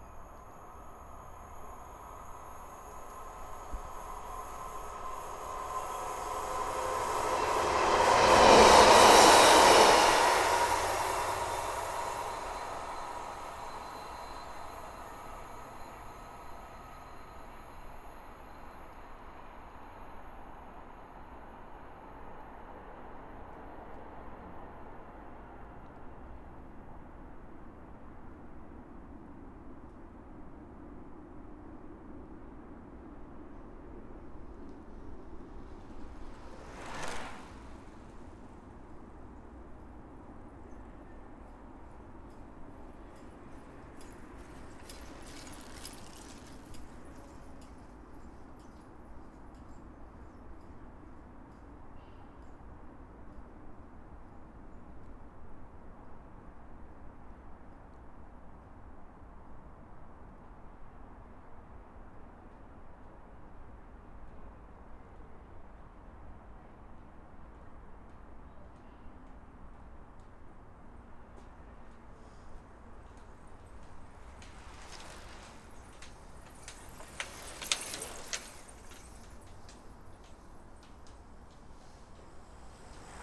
Ashley Down, Bristol, City of Bristol, UK - Bikes & trains
Standing on a bike path facing away from the train lines, you can hear bikes coming fast downhill from right to left, and struggling slowly up from left to right. A couple of trains pass in each direction.
Recorded w/ an Audio Technica BP4029 (MS stereo shotgun) into a Sound Devices 633 mixer.